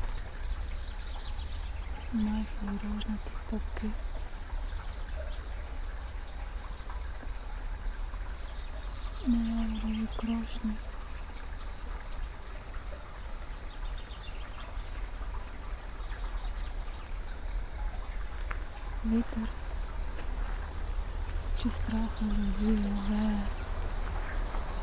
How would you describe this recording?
stream, spoken words, birds, steps